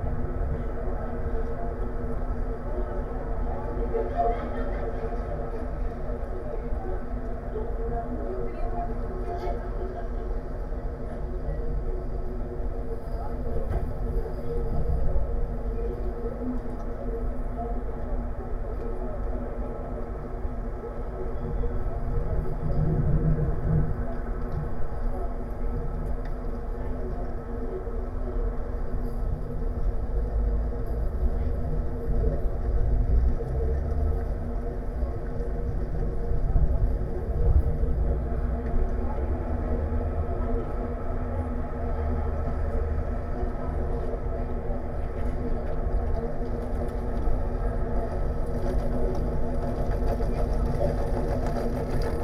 Tallinn, Baltijaam railside fence - Tallinn, Baltijaam railside fence (recorded w/ kessu karu)
hidden sounds, resonance inside two sections of a metal fence along tracks at Tallinns main train station